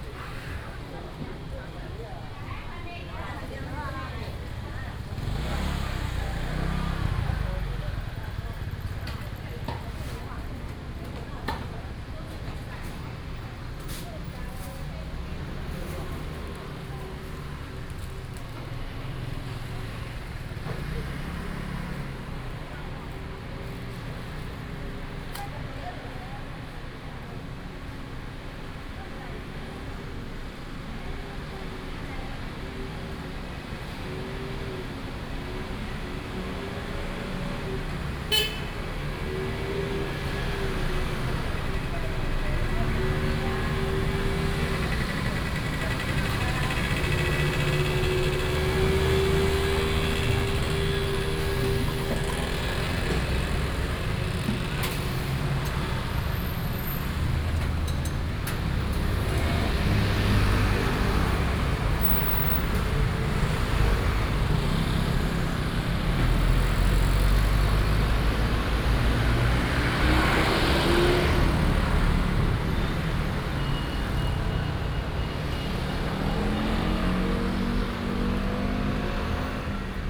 Nanzhu Rd., Luzhu Dist. - Traditional market blocks
Walking in the traditional market, traffic sound, Traditional market blocks